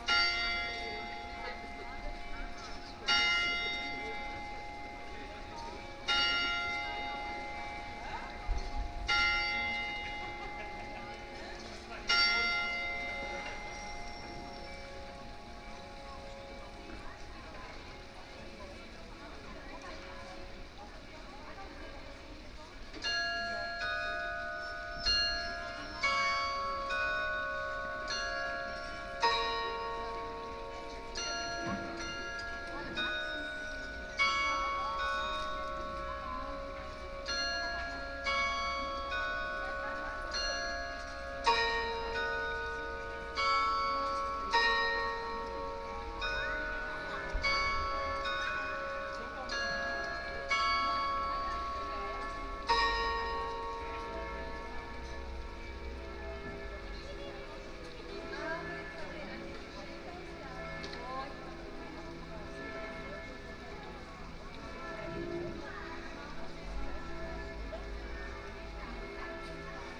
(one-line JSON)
{"title": "Marketplace in Tübingen - Tübingen Marketplace and Town Hall Glockenspiel", "date": "2019-09-17 12:00:00", "description": "Marktplatz in Tübingen (ohne Wochenmarkt): Stimmen von Einheimischen und Touristen, Brunnen, Rathaus-Glockenspiel, Glocken verschiedener Kirchen in der Nähe.\nMarketplace in Tübingen (without weekly market): Voices of locals and tourists, fountains, town hall glockenspiel, bells of various churches nearby.", "latitude": "48.52", "longitude": "9.05", "altitude": "339", "timezone": "Europe/Berlin"}